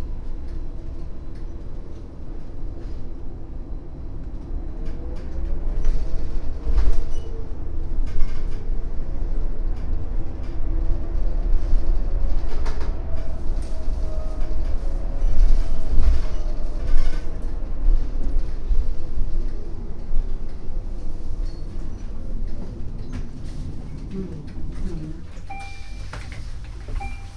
vancouver, pender street, bus drive
in a city bus, driving downtown - automatic voice announcing the next bus stops
soundmap international
social ambiences/ listen to the people - in & outdoor nearfield recordings